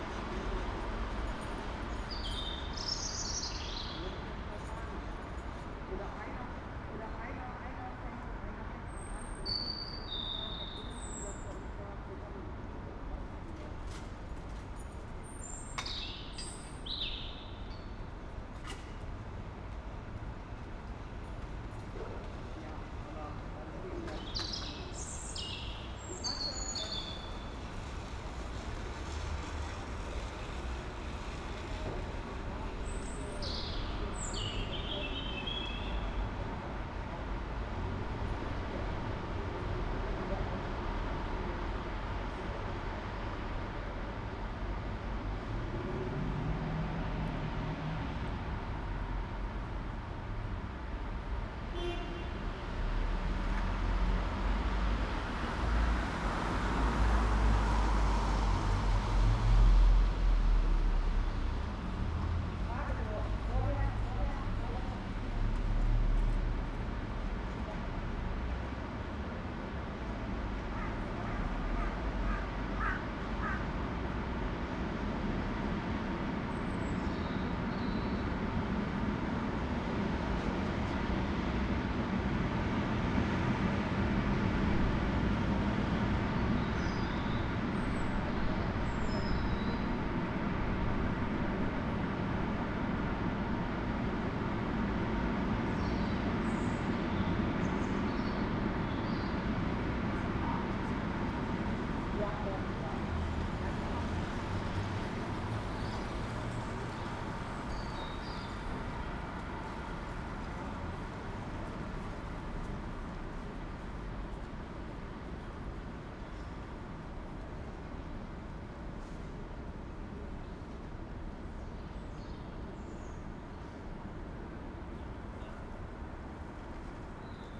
Neue Maastrichter Str., Köln - bird, train, street ambience
early morning street ambience, a bird in the tree, a train passes-by
(Sony PCM D50)
3 March, 18:35